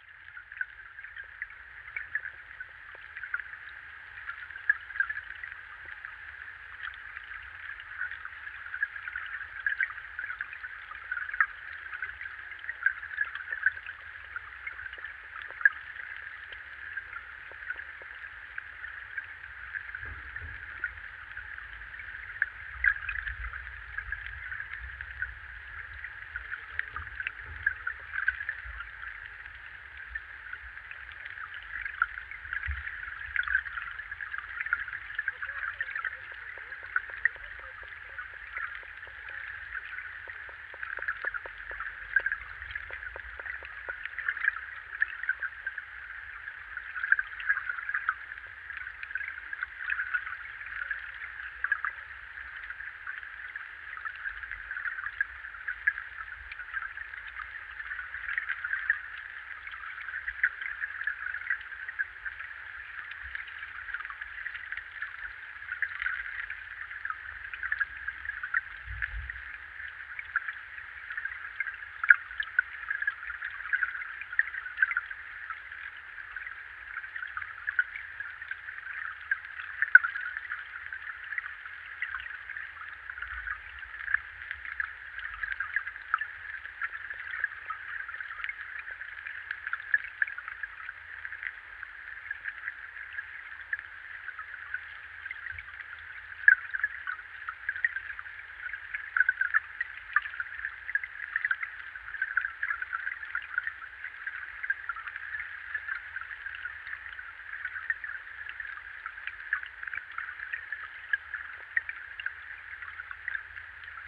Vilnius, Lithuania, listening to underwaters
hydrophones in the river Vilnia
Vilniaus miesto savivaldybė, Vilniaus apskritis, Lietuva